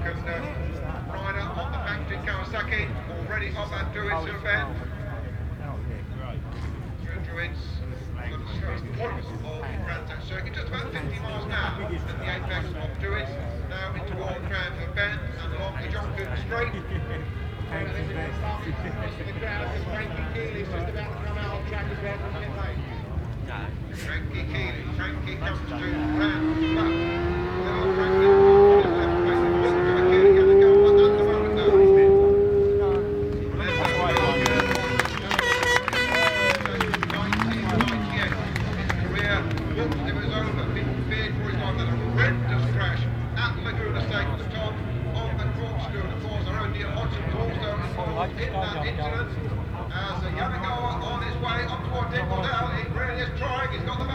Brands Hatch Circuits Ltd, Brands Hatch Road, Fawkham, Longfield, United Kingdom - World Superbikes 2000 ... Superpole (cont) ...
World Superbikes 2000 ... Superpole (contd) ... one point stereo mic to minidisk ...